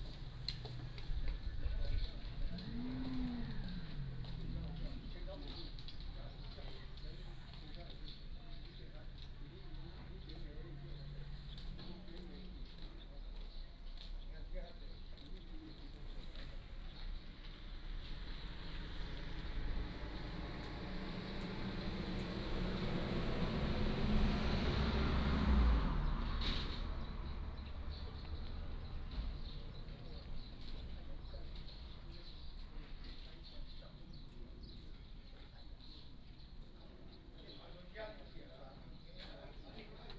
岐頭遊客中心, Baisha Township - Small pier
Small village, Traffic Sound, Small pier, Visitor Center
Penghu County, Baisha Township, October 2014